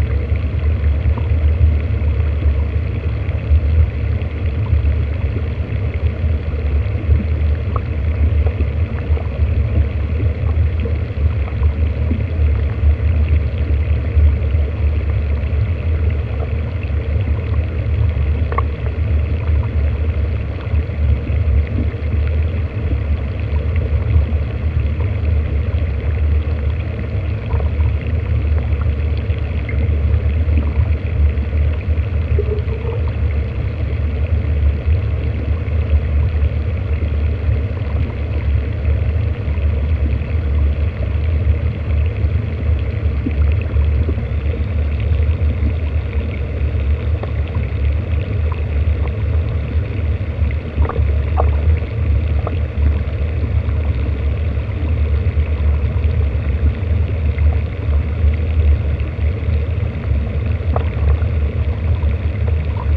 SBG, Gorg Negre - Torrent del Infern (hidro1)
Exploración con hidrófonos del torrente y la cascada.